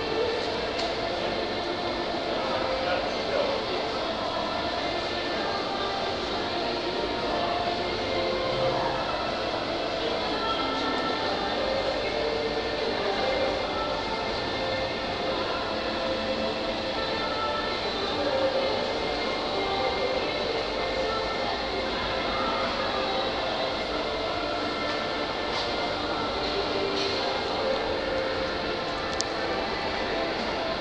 Galaxy Shopping Center, Szczecin, Poland
Galaxy Shopping Center